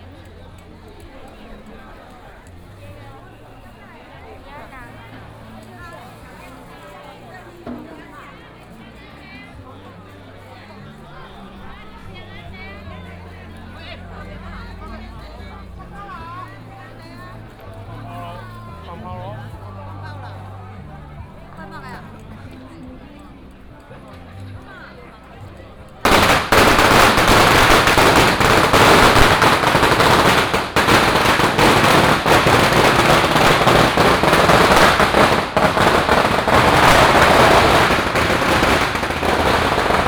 Baishatun, 苗栗縣通霄鎮 - Mazu Pilgrimage activity
Firecrackers and fireworks, Many people gathered in the street, Baishatun Matsu Pilgrimage Procession, Mazu Pilgrimage activity